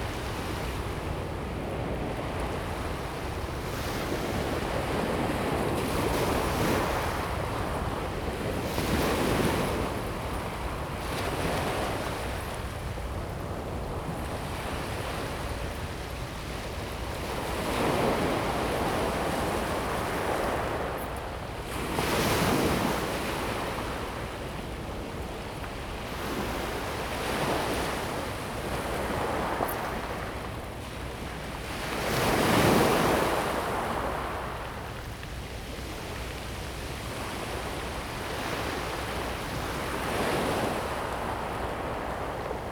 崁頂, Tamsui Dist., Taiwan - waves
at the seaside, Sound waves
Zoom H2n MS+XY
5 April 2016, ~18:00